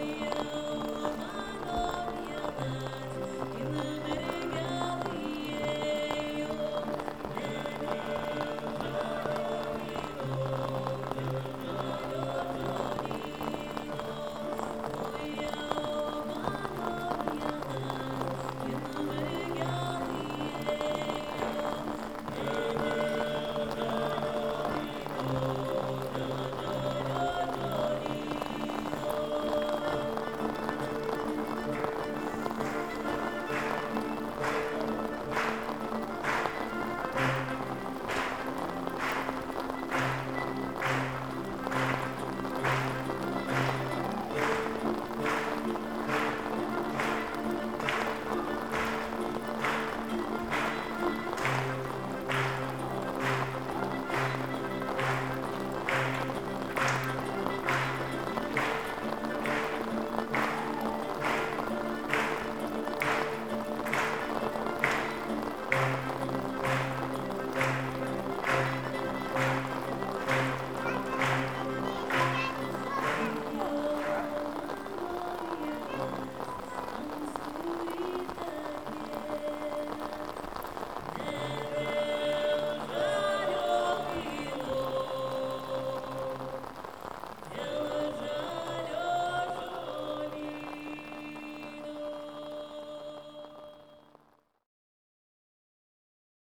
Bistrampolis, Lithuania
Georgian vocal ensemble CHVENEBUREBI with lithuanian singer sings lithuanian song. recording was done outside the building in the rain under the umbrella...
9 August 2015, 18:10